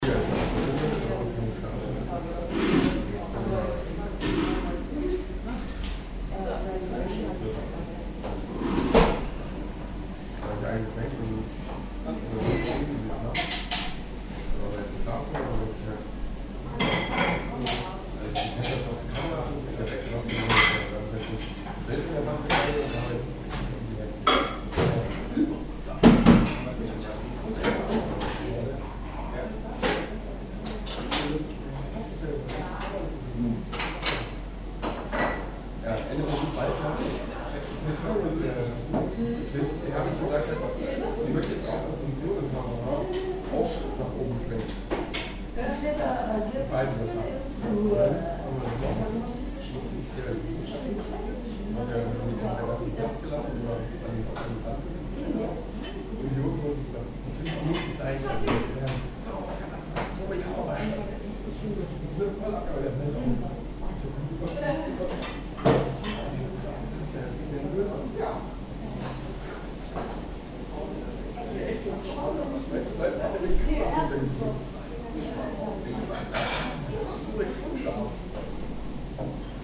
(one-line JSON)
{"title": "cologne, kurfuerstenstr, zikade", "date": "2008-06-05 20:53:00", "description": "soundmap: köln/ nrw\nmittagszeit in der gastronomie zikade, geräusche von geschirr, besteck, gesprächsfetzen, hintergrundmusik\nproject: social ambiences/ listen to the people - in & outdoor nearfield recordings", "latitude": "50.92", "longitude": "6.96", "altitude": "54", "timezone": "Europe/Berlin"}